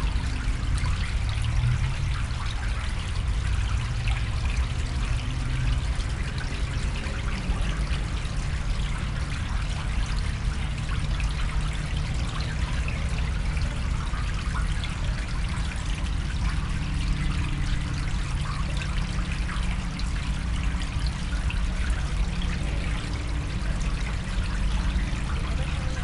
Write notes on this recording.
Recorded with a pair of DPA 4060s and a Marantz PMD661